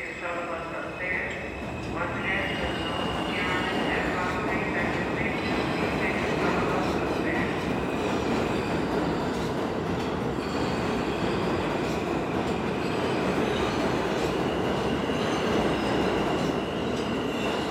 Jay Street–MetroTech Station.
Late-night commuters, and train announcements.